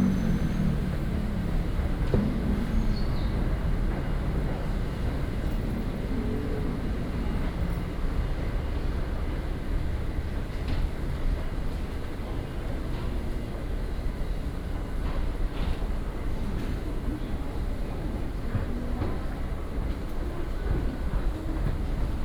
公館站, Taipei City - walk into the MRT station
Traffic Sound, walk into the MRT station
Taipei City, Taiwan